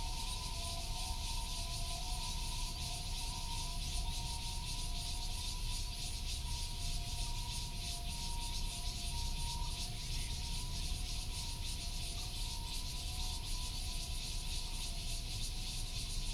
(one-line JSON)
{"title": "荷顯宮, Taoyuan Dist. - In the square of the temple", "date": "2017-07-27 06:45:00", "description": "In the square of the temple, traffic sound, birds sound, Cicada cry", "latitude": "25.02", "longitude": "121.32", "altitude": "118", "timezone": "Asia/Taipei"}